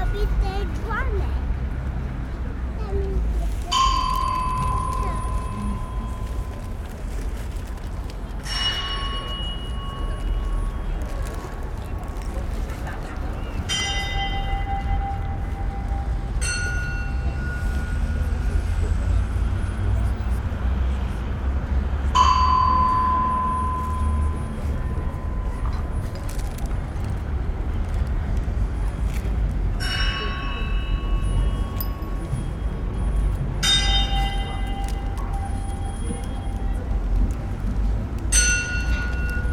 live in the square Łódź, Poland
Binaural recording of site-specific performance in the tunnels below this square, made at the end of the 'Urban Sound Ecology' workshop organized by the Muzeum Sztuki of Lodz Poland. Speakers were placed in the square for the public to listen.